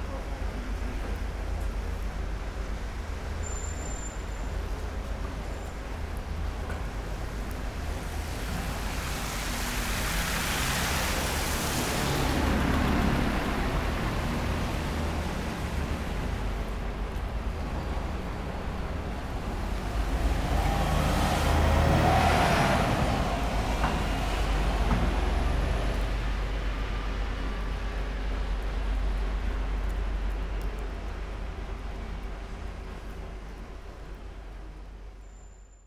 Vaise, Lyon 9e arrondissement .Un passage près d'une gare, une énorme ventilation, des bus...